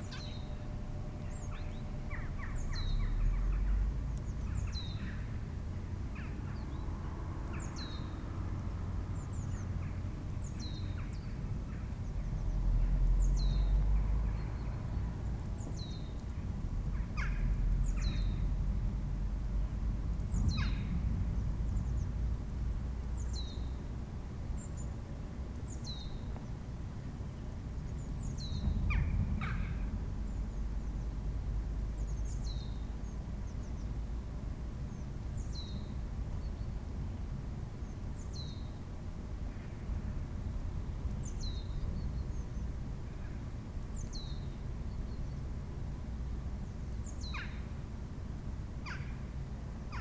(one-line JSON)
{"title": "Norra Vånga - Norra Vånga village", "date": "2016-03-03 15:20:00", "description": "Field recording of the village Norra Vånga on a sunny spring day. Recorded with Zoom H5 using custom made binuaral microphones. Sounds best with headphones.", "latitude": "58.28", "longitude": "13.27", "altitude": "111", "timezone": "Europe/Stockholm"}